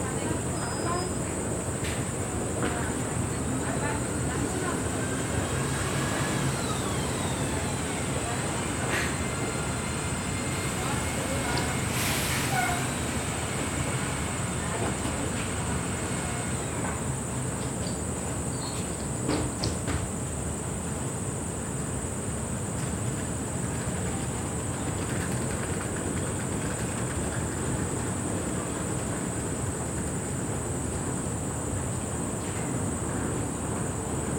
the AC unit flapping and grinding over a small patch marked off for the smokers